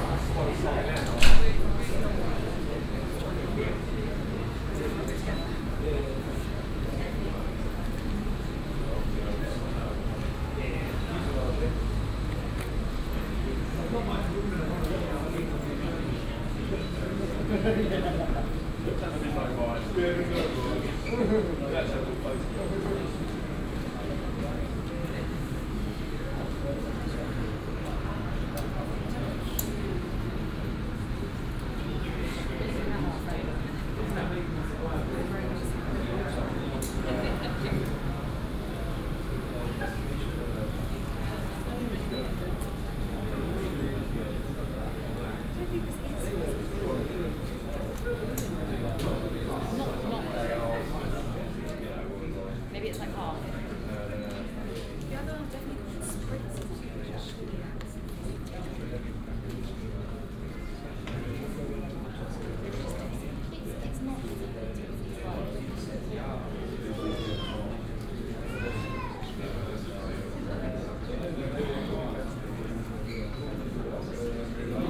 Airport Berlin Schönefeld SFX, Germany - at gate 65, waiting for departure
ambience at gate65, terminal B, airport Berlin Schönefeld, waiting or departure, volume in room decreases
(Sony PCM D50, OKM2 binaural)
2 June 2013, 11:40